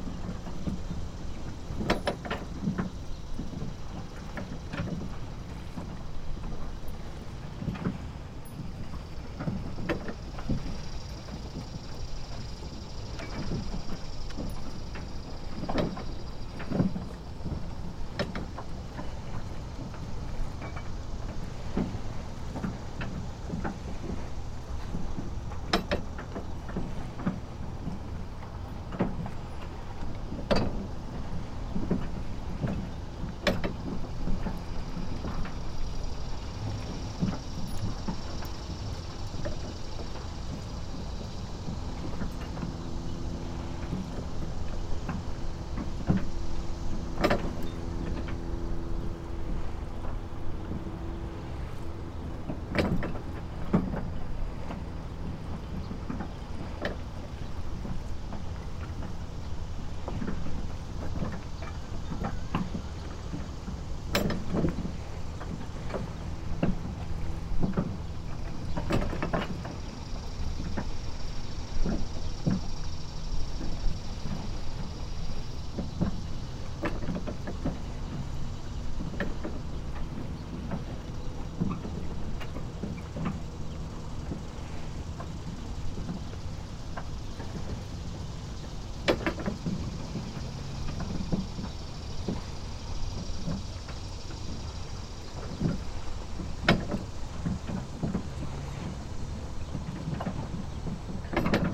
Recorded on the boardwalk over the water.
Zoom H6